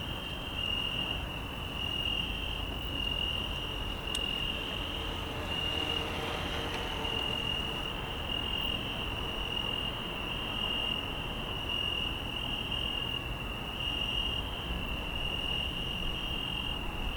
Bikeway close to Vienna International Centre, Subway - Crickets in Vienna (excerpt, schuettelgrat)
Crickets, traffic noise, bicycles, siren, recorded at night. Part of "Grillen in Wien" ("Crickets in Vienna")